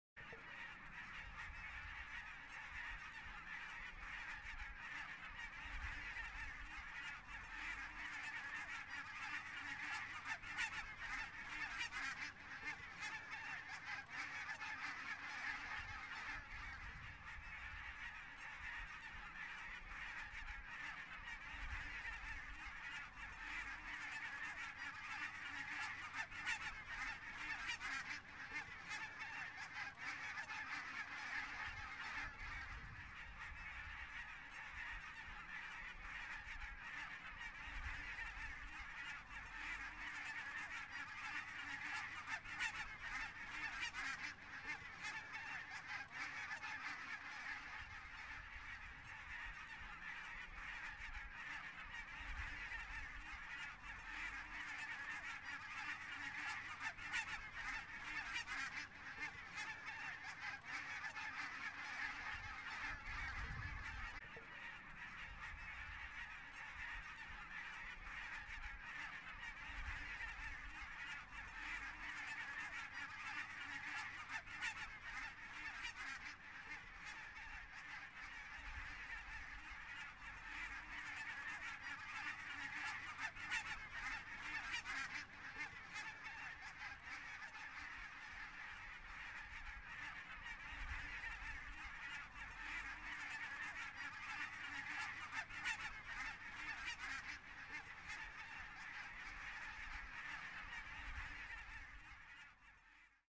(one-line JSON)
{"title": "Hetlingen, Deutschland - Huge flock of Geese", "date": "2016-02-28 11:43:00", "description": "Geese swarming in the marshlands", "latitude": "53.59", "longitude": "9.64", "timezone": "Europe/Berlin"}